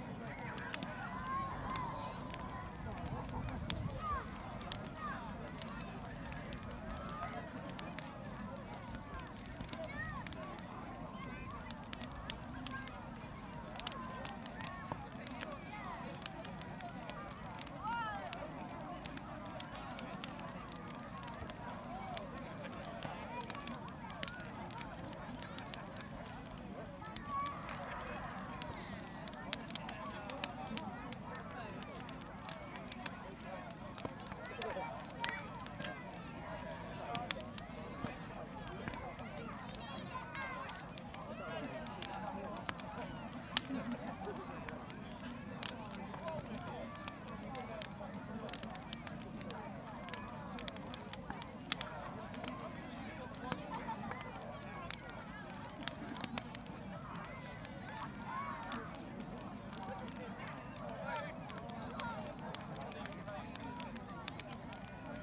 South Stoke, Oxfordshire, UK - South Stoke Bonfire
A large crowd are drawn to the bonfire constructed as part of 'South Stoke Fireworks Spectacular'. Recorded using the built-in microphones on a Tascam DR-05.
Reading, Oxfordshire, UK, 6 November 2015, ~20:00